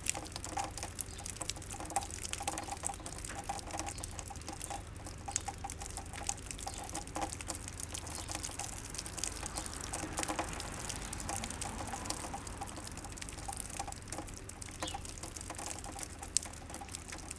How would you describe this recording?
A constant rain is falling on La Rochelle this morning. Water is falling from a broken roofing.